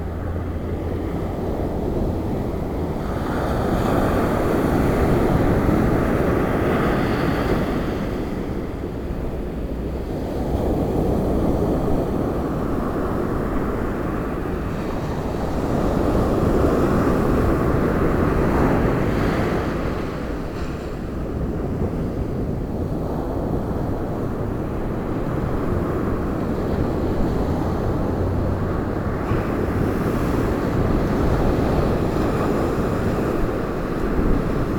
Vineta, Swakopmund, Namibia - Sunset at Swakop...
Sunset at the Swakop...
recordings are archived here: